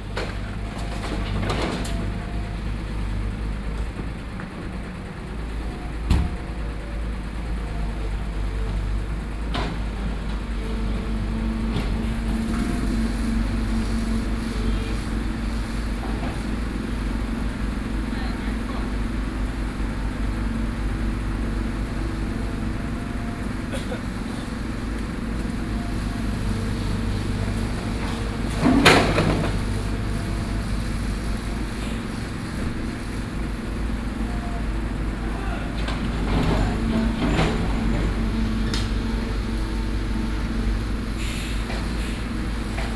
Taipei city, Taiwan - Road construction
13 November 2012, ~11:00